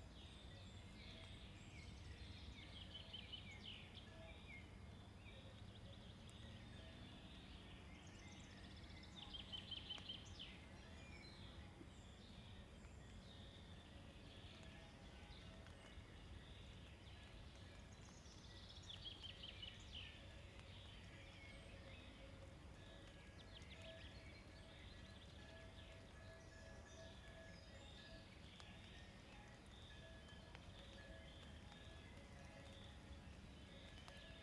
Buchenberg, Deutschland - Regentropfen
Es beginnt zu Regen.